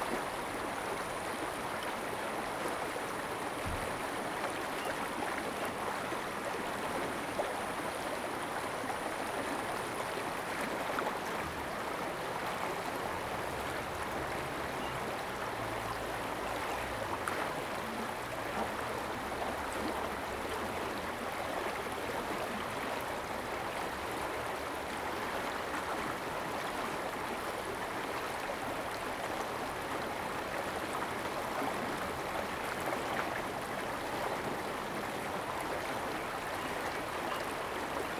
Brook, In the river, stream, traffic sound, birds
Zoom H2n MS+XY